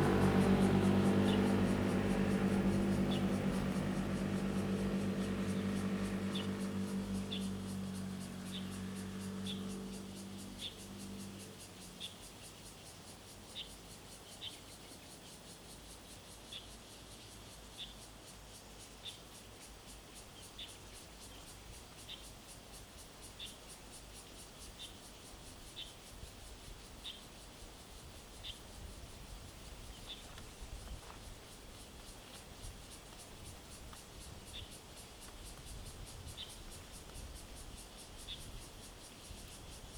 Traffic Sound, Birds singing
Zoom H2n MS+XY
Hualien County, Taiwan